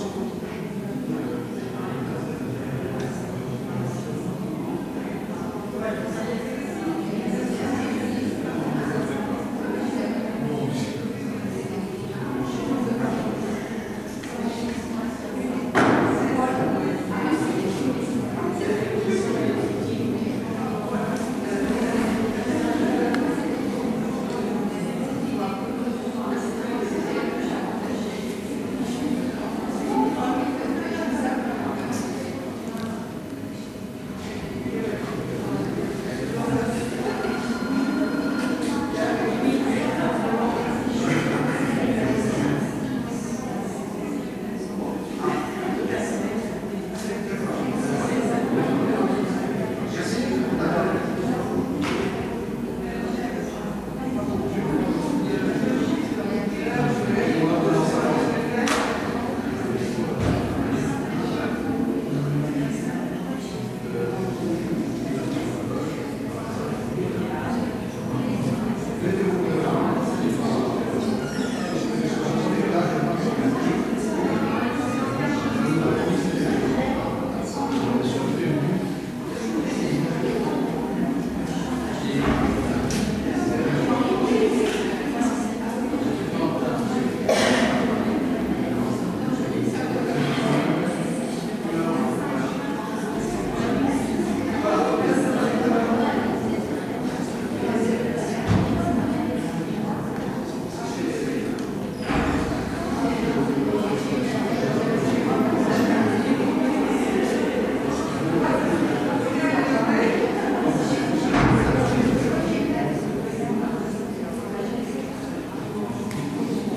People waiting, talking, before going to the theater.
Tech Note : Sony ECM-MS907 -> Minidisc recording.